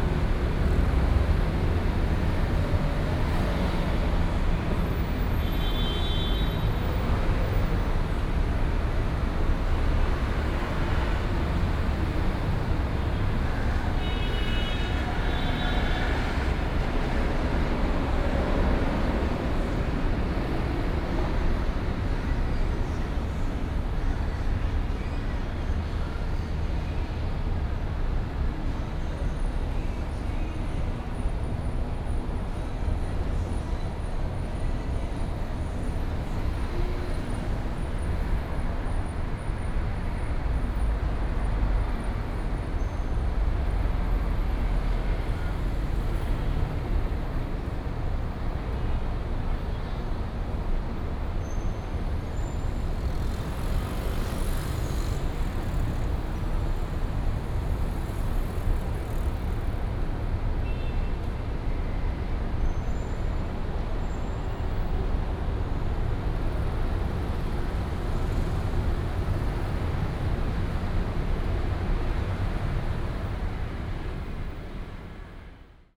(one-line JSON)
{"title": "Sec., Beiyi Rd., Xindian Dist., New Taipei City - traffic sound", "date": "2015-07-25 17:55:00", "description": "The above is an elevated rapid road, traffic sound", "latitude": "24.96", "longitude": "121.54", "altitude": "27", "timezone": "Asia/Taipei"}